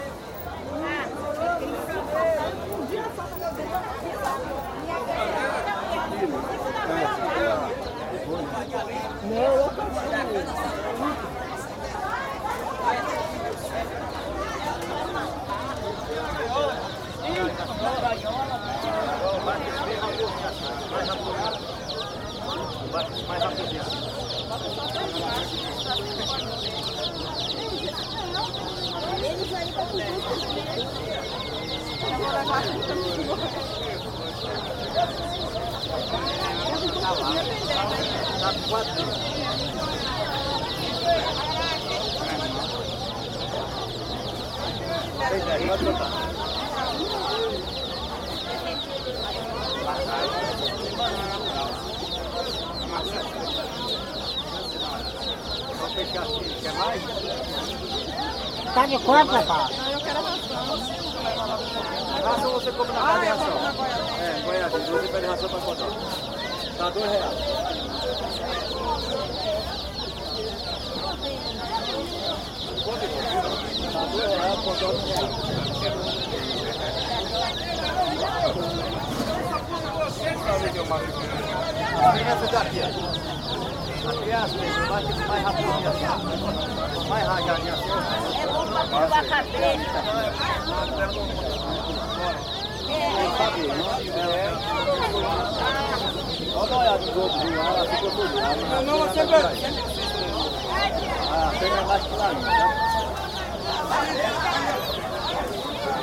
Feira, Cachoeira - BA, Brasil - Feira, Pintinhos pintados - Market Place, Painted chicks
Feira, vende-se pintinhos verde, rosa e roxo.
Market Place, sells green, pink and purple chicks.
Brazil, 27 January 2018